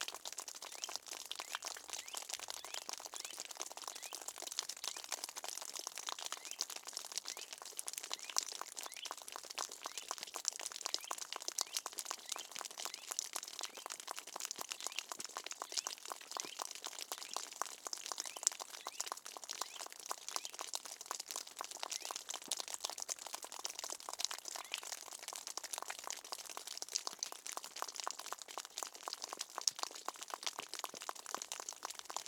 Kuktiškės, Lithuania, water dripping
sunny day, snow is melting on the roof, water dripping on the ground
Utenos rajono savivaldybė, Utenos apskritis, Lietuva, 22 February, 13:10